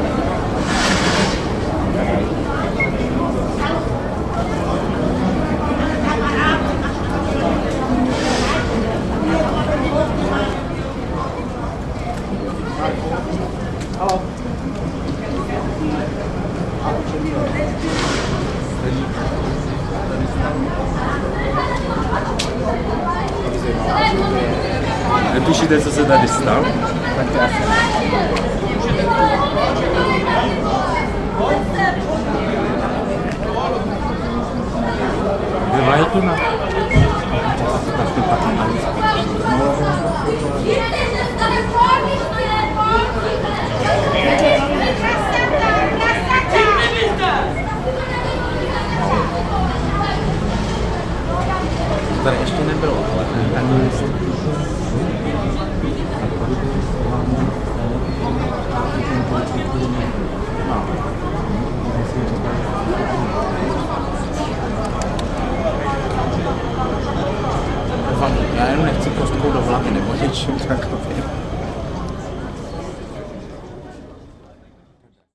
Pernerova ulice, cleaning
city cleaners and sudden quarell of roma citisens.